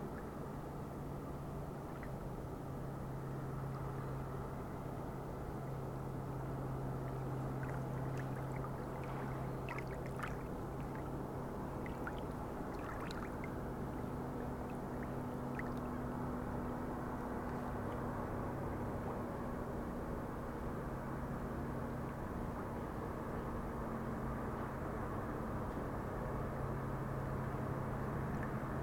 La pointe de l'Ardre, Brison-Saint-Innocent, France - Vagues artificielles
Plage de la pointe de l'Ardre pas de vent sur le lac, un bateau à moteur passe, plusieurs minute après l'onde aquatique de sa trainée vient faire déferler des vagues sur le rivage.